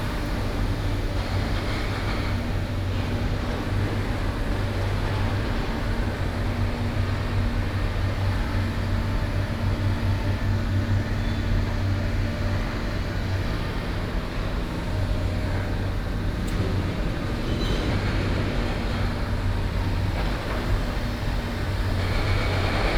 In the alley, Sound from construction site
Sony PCM D50+ Soundman OKM II